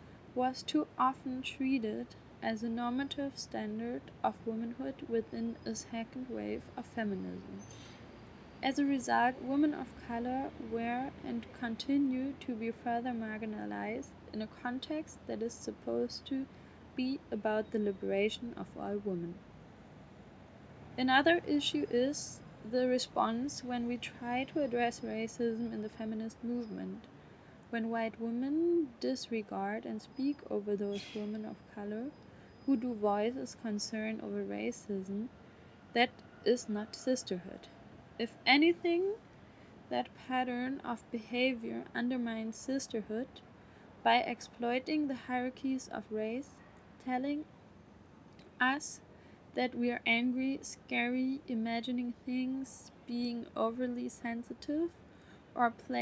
The reading group Decol_IfKiK was distinguished by the fact that they read different narratives in certain places in Berlin, which for many represent an unknown connection with German colonialism. Places, houses, monuments receive new narratives for a more open discourse about our common colonial past.
at this site, the former News Agency for the Orient (NfO)
Shortly after the outbreak of the First World War in August 1914 was the following November, the founding of the News Office for the Orient (NfO) by the Foreign Office and the Politics Department in the Deputy General Staff. The stumbling block to founding the NfO was a proposal by the diplomat and archaeologist Max von Oppenheim. In order to weaken the enemy forces, especially the British and French, von Oppenheim proposed to stir up insurrection in the British and French colonies of the Near and Middle East.